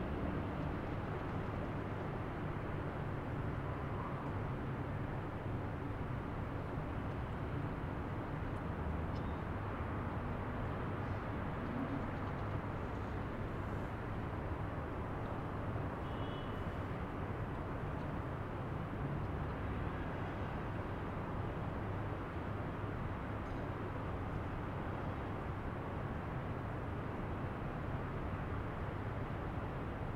Tehran Province, Tehran, District, بهرام، Iran - Ambience of Tehran at night (looking to north)
23 May 2017, 22:03